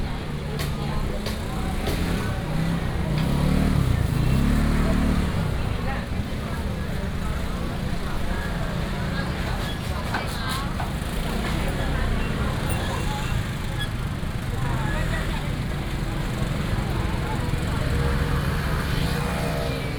Zhongyang N. Rd., Sanchong Dist., New Taipei City - Walking through the traditional market
Walking through the traditional market, Traffic sound, Many motorcycles